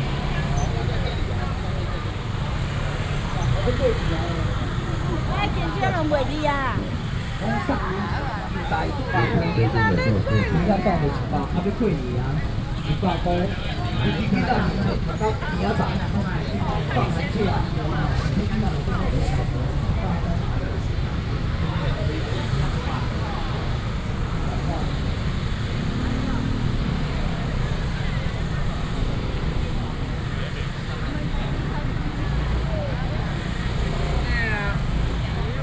Datong St., Shalu Dist., Taichung City - Very noisy market
Traditional markets, Very noisy market, Street vendors selling voice, A lot of motorcycle sounds
Shalu District, Taichung City, Taiwan, 19 January 2017